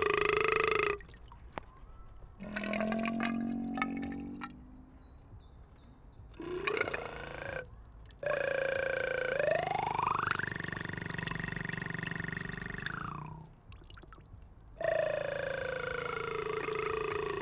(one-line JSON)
{"title": "København, Denmark - Crazy water tap", "date": "2019-04-16 17:00:00", "description": "A water tap is speaking to us, talking with incredible words everytime we want to drink. We play with it during five minutes. Some passers are laughing with the sound.", "latitude": "55.69", "longitude": "12.55", "altitude": "9", "timezone": "Europe/Copenhagen"}